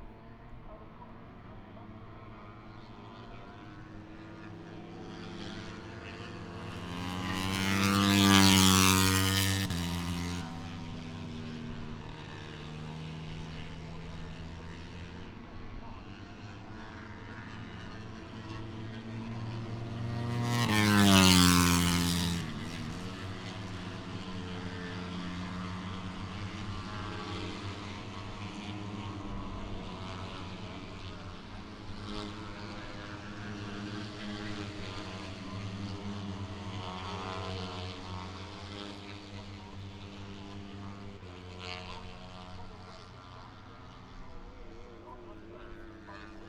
Silverstone, UK - british motorcycle grand prix 2016 ... moto three ...
moto three free practice two ... Maggotts ... Silverstone ... open lavaliers on T bar strapped to a sandwich box on a collapsible chair ... windy grey afternoon ...